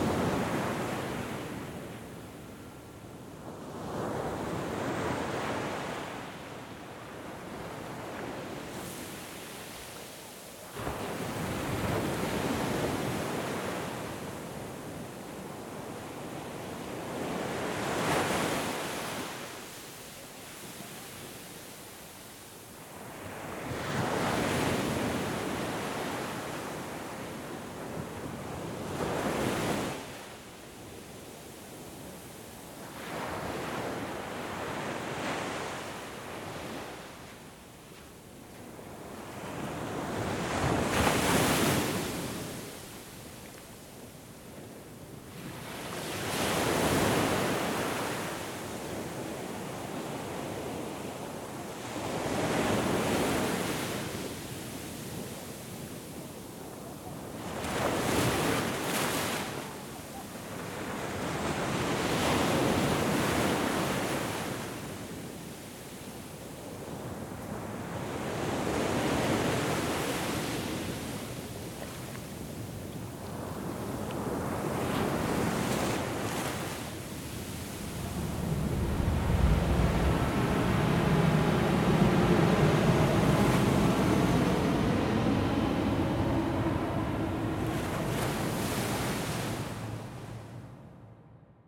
Faro - Portugal
Ambiance plage
Portugal, 6 October, 15:30